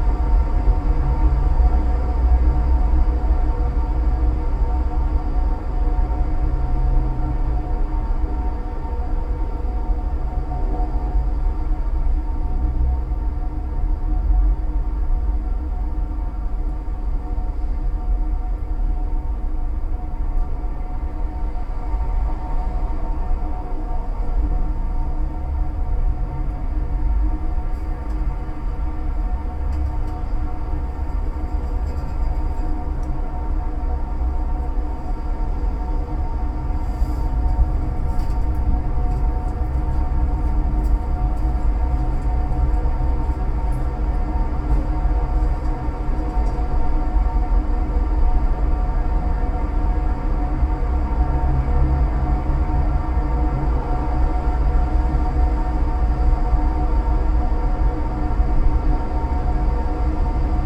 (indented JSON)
{
  "title": "parking garage shopping cart rack",
  "description": "hollow tubular rack for storing shopping carts on the top storey of an empty parking garage, rathauspassage",
  "latitude": "52.52",
  "longitude": "13.41",
  "altitude": "47",
  "timezone": "Europe/Berlin"
}